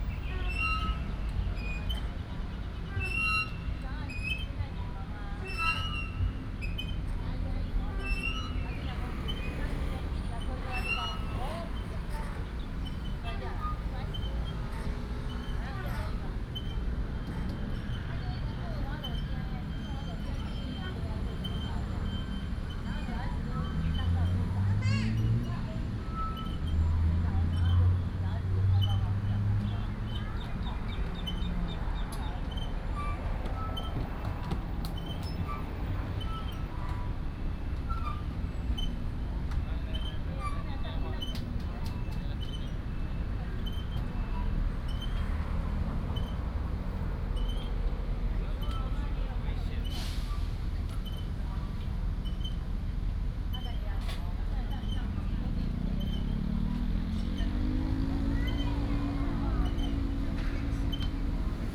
Shalun Rd., Tamsui Dist., New Taipei City - Swing
Traffic Sound, Children Playground, Sitting next to the park, Swing